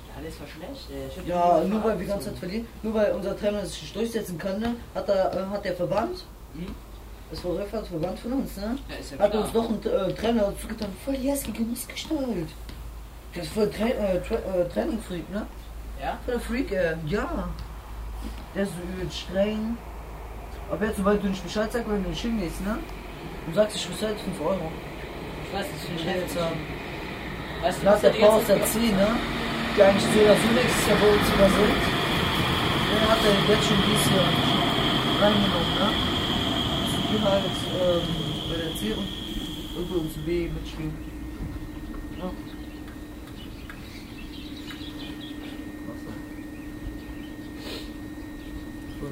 refrath, bahn haltestelle lustheide
project: social ambiences/ listen to the people - in & outdoor nearfield recordings